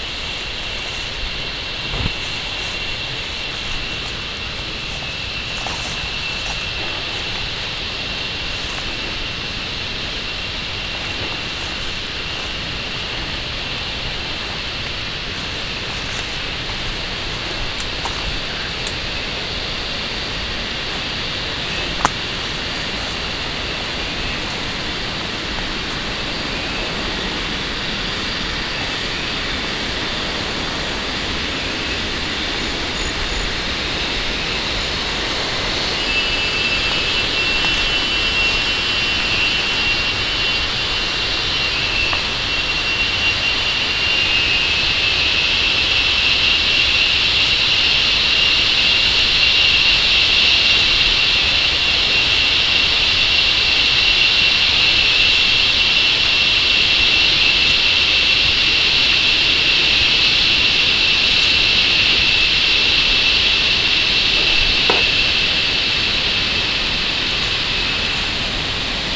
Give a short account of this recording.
Killer cicadas, immensely shrieking, while trecking in the woods around Ban Huai Makhuea Som near the Myanmar border close to Mae Hong Son, Thailand. Ben is running an amazing refugees children school there, and offers informative and relaxing trecking tours.(theres another entry with this sound, it is wrongly located)